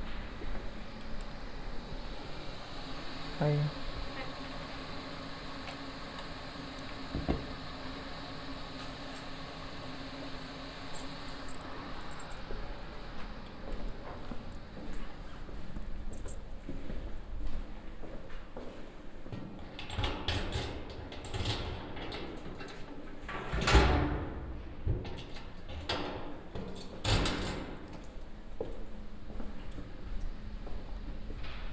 Mathematische Fachbibliothek @ TU Berlin - Enter Mathematische Fachbib